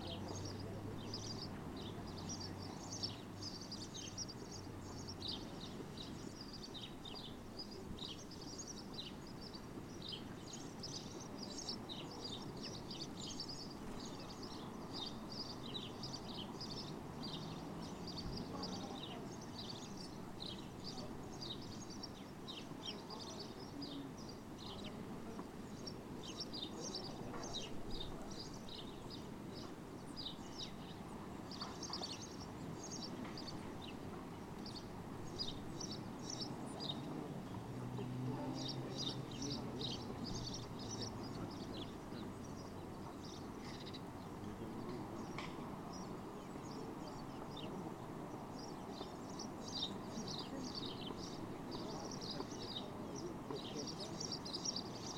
{"title": "Frangokatello Castle, Crete, frogs", "date": "2019-05-03 11:35:00", "description": "pond at the sea...", "latitude": "35.18", "longitude": "24.23", "altitude": "1", "timezone": "Europe/Athens"}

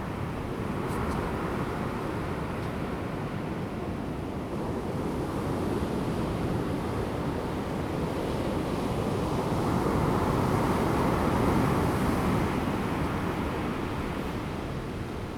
{"title": "大武濱海公園, 台東縣大武鄉台9線 - At the beach", "date": "2018-03-23 13:42:00", "description": "At the beach, Sound of the waves\nZoom H2n MS+XY", "latitude": "22.35", "longitude": "120.90", "altitude": "2", "timezone": "Asia/Taipei"}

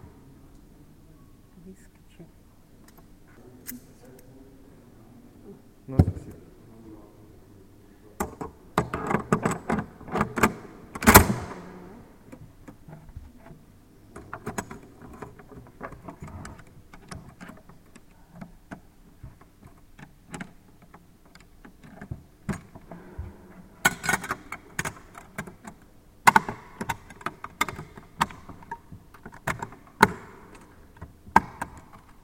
{"title": "Russland, Piter Montage AK 47", "latitude": "59.95", "longitude": "30.31", "altitude": "11", "timezone": "Europe/Berlin"}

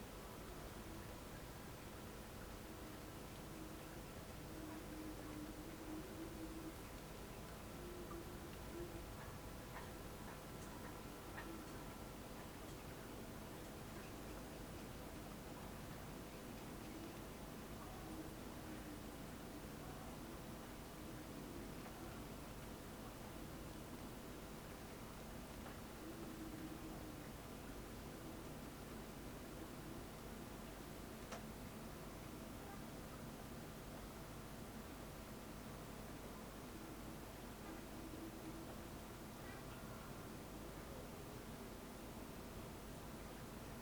{"title": "workum, het zool: marina, berth h - the city, the country & me: marina, aboard a sailing yacht", "date": "2011-06-29 00:25:00", "description": "rain water dripping off\nthe city, the country & me: june 29, 2011", "latitude": "52.97", "longitude": "5.42", "altitude": "1", "timezone": "Europe/Amsterdam"}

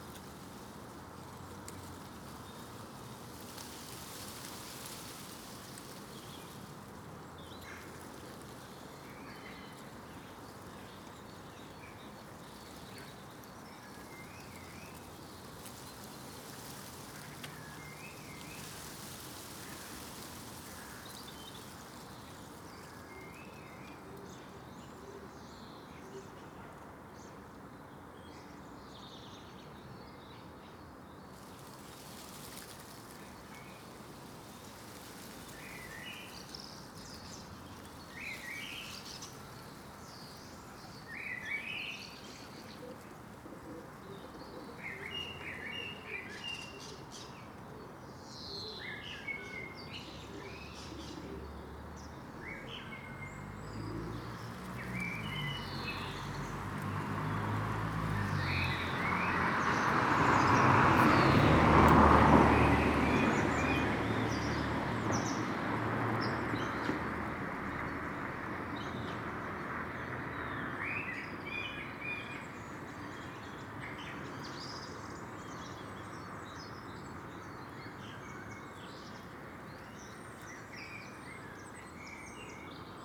{"title": "Contención Island Day 54 inner west - Walking to the sounds of Contención Island Day 54 Saturday February 27th", "date": "2021-02-27 07:27:00", "description": "The Poplars High Street Graham Park Road\nA heraldic turn\natop gateposts\nlions hold shields\nA once gateway bricked back into a wall\nIn a Range Rover\nwhite hair uncombed\neyes staring\nA woodpecker drums on a chimney\na thrush limbers up for spring", "latitude": "55.00", "longitude": "-1.62", "altitude": "73", "timezone": "Europe/London"}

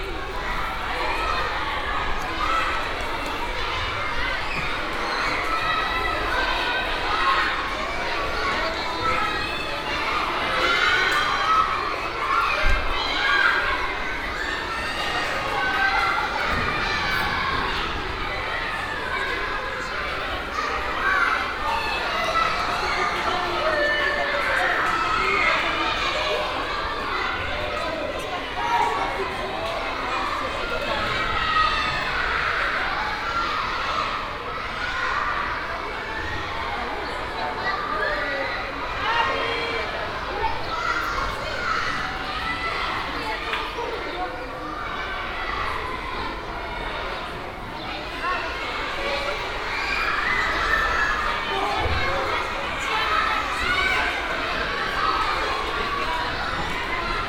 {"title": "paris, rue des vertus, elementary school", "date": "2009-10-16 16:09:00", "description": "a small, old school on midday while a break. kids enjoy their free time on the school's playground\ninternational cityscapes - sociale ambiences and topographic field recordings", "latitude": "48.86", "longitude": "2.36", "altitude": "46", "timezone": "Europe/Berlin"}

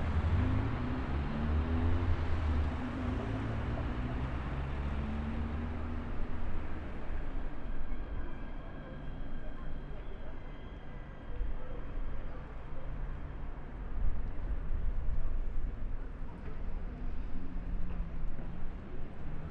{"title": "Cours de la République, Le Havre, France - Train - Train", "date": "2018-03-22 18:14:00", "description": "Urban train passing and sound signals, traffic, people. Recorded with a AT BP4025 into a SD mixpre6.", "latitude": "49.50", "longitude": "0.13", "altitude": "7", "timezone": "Europe/Paris"}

